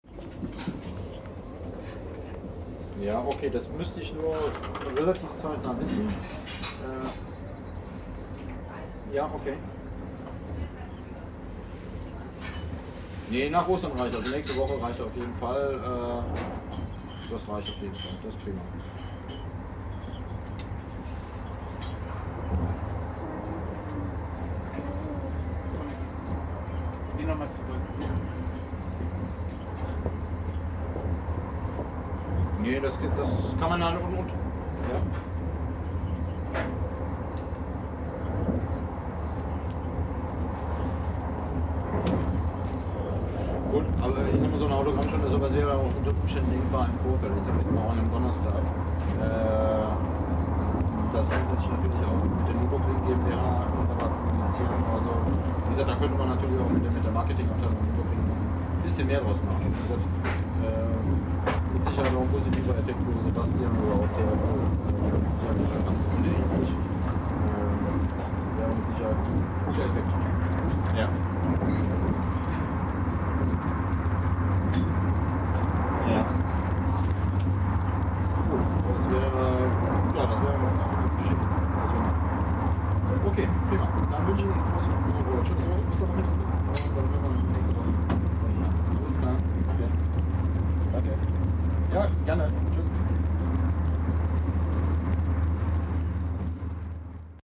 diner hannover-bielefeld
one of those guys bothering you with their cellphones, while you want to eat.
recorded apr 9th, 2009.
Hohnhorst, Germany